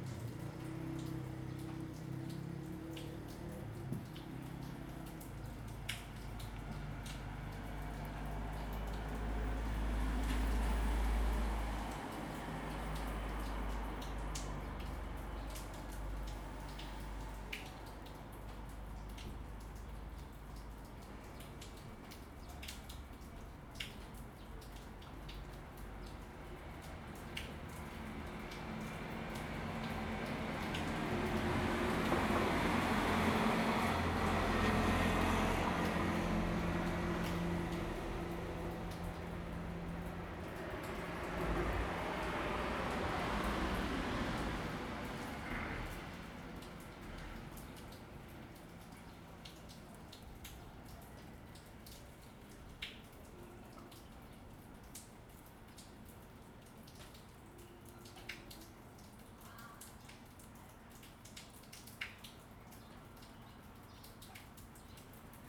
長濱鄉公所, Changbin Township - Rain
Rain, In the Square, Traffic Sound, Birds singing, Raindrops sound
Zoom H2n MS+XY